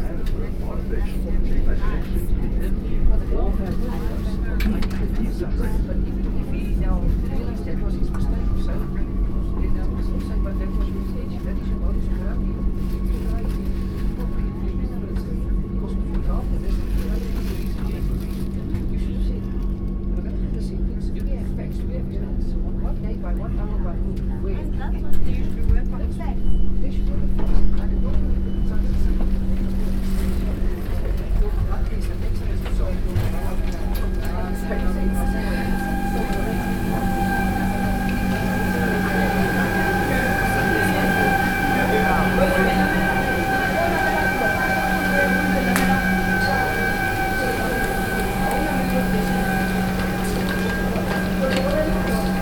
Sandton, South Africa - on a Gautrain into Jozi...
sounds and voices on a Gautrain from Pretoria into Johannesburg Park Station...